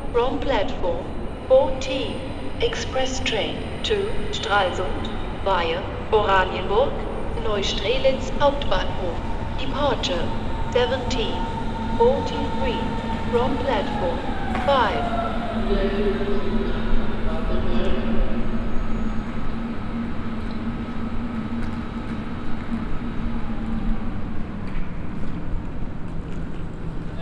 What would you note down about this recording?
soundmap d: social ambiences/ listen to the people - in & outdoor nearfield recordings